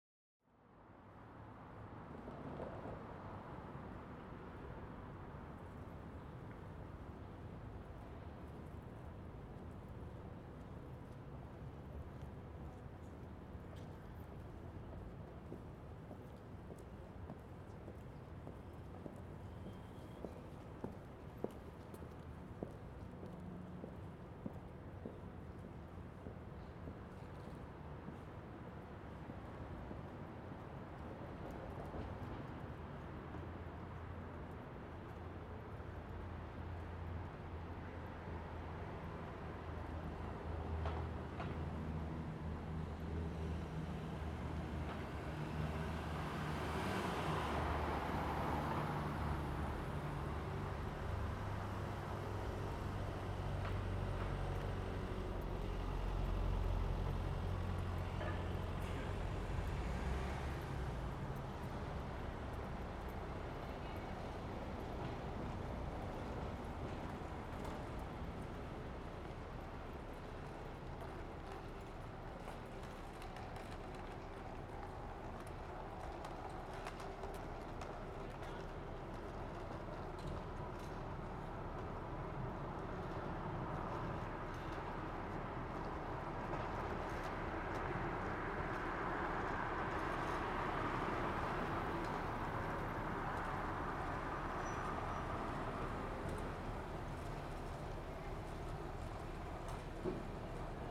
North Dock, Dublin, Ireland - Mayor Street Lower
Quiet and peaceful evening start on Saint Patrick's day. The small amount of vehicles let the ear to pay attention to many other sound sources that coexist along the street. This is the soundwalk's final stop on my visit to Dublin.
You can listen the rest of it on the link below.